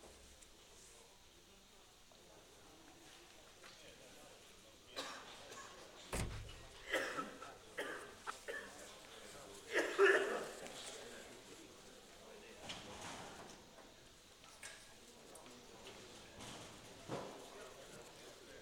Ram Auction, Shetland Marts, Shetland Islands, UK - Quiet rams in the auction ring
Before being auctioned off, the rams are all inspected for The Shetland Flock Book. This involves bringing all the rams into the show ring and assessing their breed characteristics, seeing how they stand, their confirmation, fleece quality, teeth etc. The rams are managed by a few crofters who herd them into the ring, and they sometimes butt the metal walls of that ring with their amazing horns.